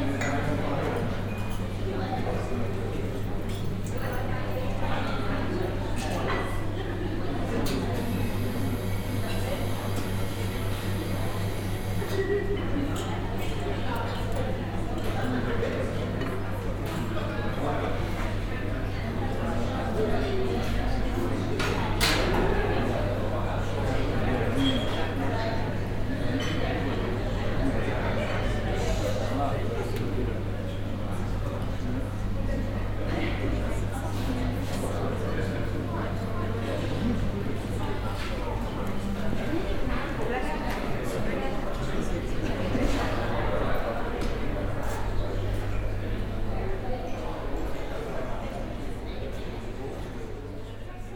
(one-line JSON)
{"title": "budapest, cafe central, indoor atmo", "description": "inside one of the famous traditional cafe places - here the central káveház\ninternational city scapes and social ambiences", "latitude": "47.49", "longitude": "19.06", "altitude": "122", "timezone": "Europe/Berlin"}